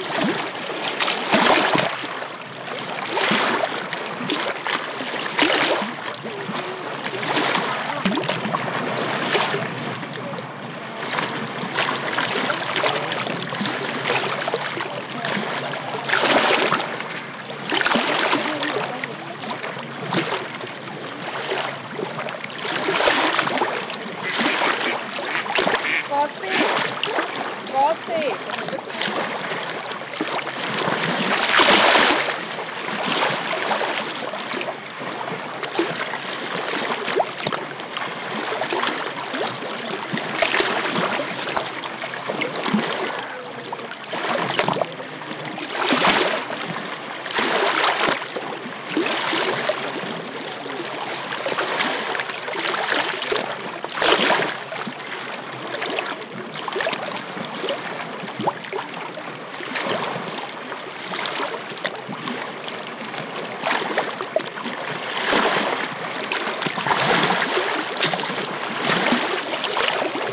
Lithuania - Lagoon Coast
Recordist: Raimonda Diskaitė
Description: At the start of the north pier, on the coast of the lagoon. Waves crashing, duck sounds and people talking in the distance. Recorded with ZOOM H2N Handy Recorder.
Nida, Lithuania